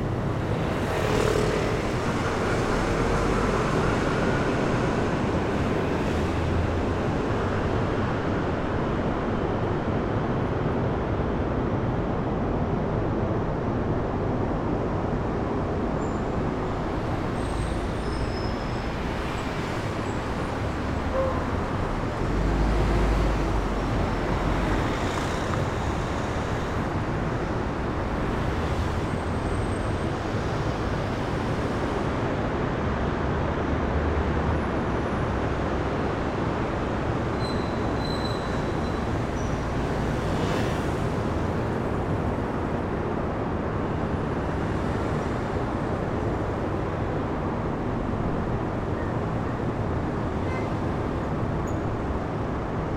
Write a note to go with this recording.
traffic in front of the kennedy tunnel, [XY: smk-h8k -> fr2le]